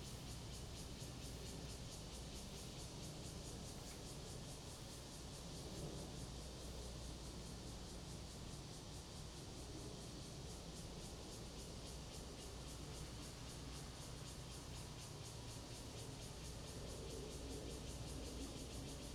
Xinguang Rd., Pingzhen Dist. - The train runs through
Next to the tracks, Cicada cry, traffic sound, The train runs through, The microphone is placed in the grass
Zoom H2n MS+ XY